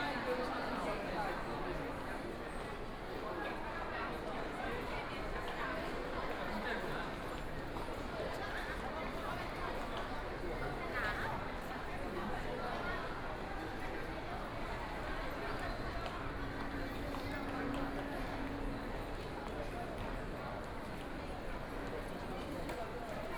From the Plaza to the underground mall department stores, The crowd, Binaural recording, Zoom H6+ Soundman OKM II